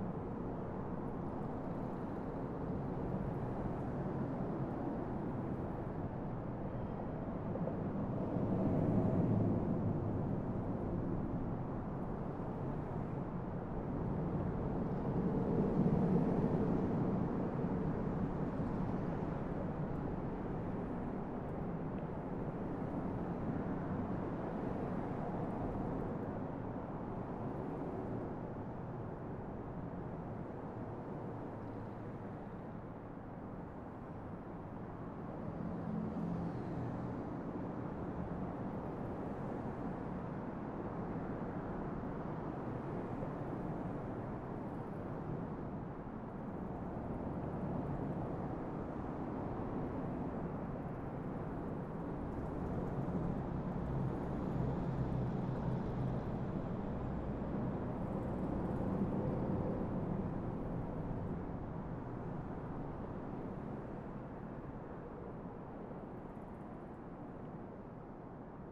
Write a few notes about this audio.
Rio Grande Interstate 40 Underpass accessed via Gabaldon Place. Recorded on Tascam DR-100MKII; Fade in/out 30 seconds Audacity, all other sound unedited.